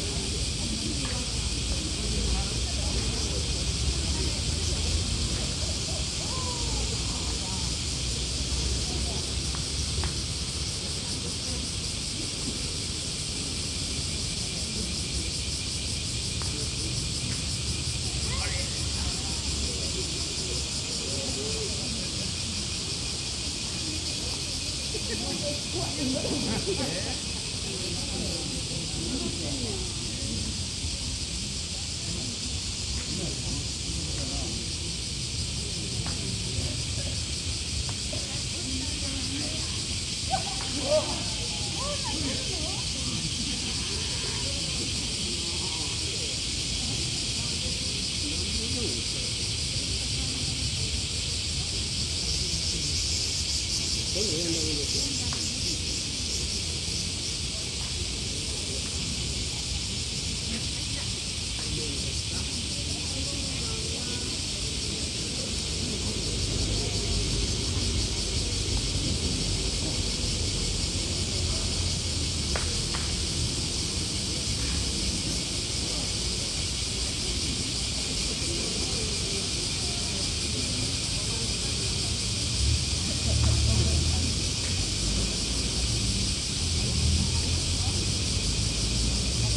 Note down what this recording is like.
Cicadas singing and people playing ground golf in Hatonomori Park on a host summer day.